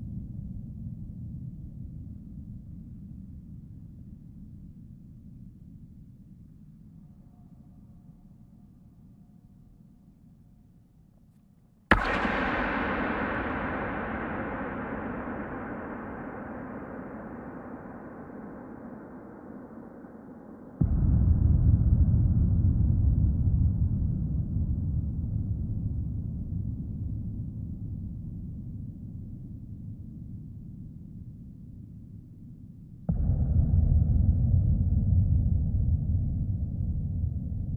Havnevej, Struer, Danmark - Struer Harbor sounds from a empty big oil tank
Throwing stones into a large oil tank and pounding on the wall with my fist. recorded with Rode
NT-SF1 Ambisonic Microphone. Øivind Weingaarde
Region Midtjylland, Danmark